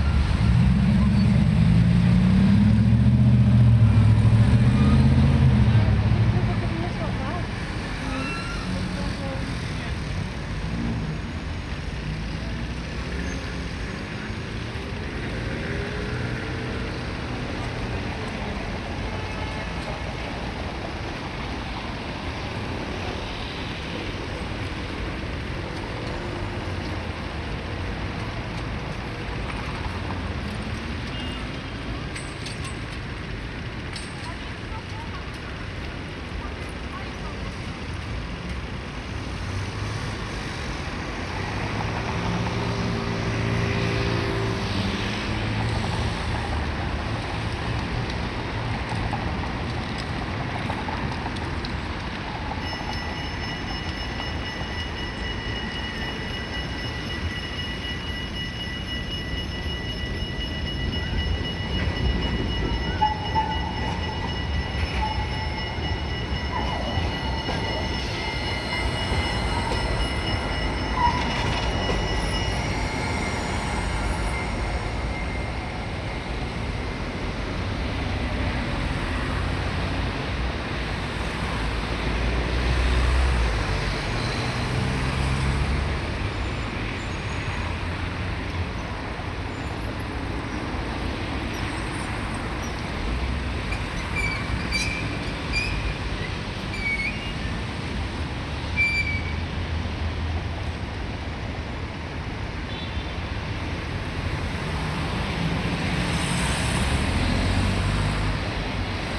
Rotterdam, Stadhuis, Rotterdam, Netherlands - Weena rotonde
Traffic jams, trams, cars, motorcycles, people. Very busy area in the city, especially on a Friday afternoon.